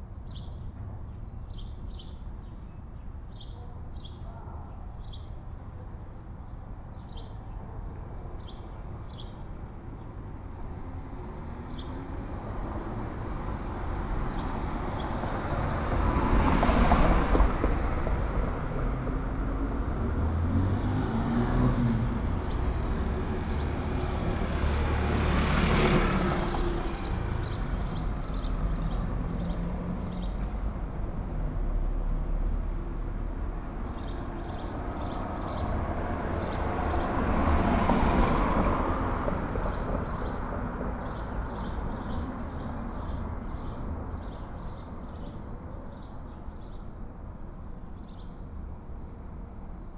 Pedro Moreno, Centro, León, Gto., Mexico - Calle Pedro Moreno, frente al Templo El Mezquitito durante el primer día de la fase 3 de COVID-19.
Pedro Moreno Street, in front of the El Mezquitito Temple during the first day of phase 3 of COVID-19.
(I stopped to record while going for some medicine.)
I made this recording on April 21st, 2020, at 2:36 p.m.
I used a Tascam DR-05X with its built-in microphones and a Tascam WS-11 windshield.
Original Recording:
Type: Stereo
Esta grabación la hice el 21 de abril 2020 a las 14:36 horas.
Guanajuato, México, 21 April, 14:36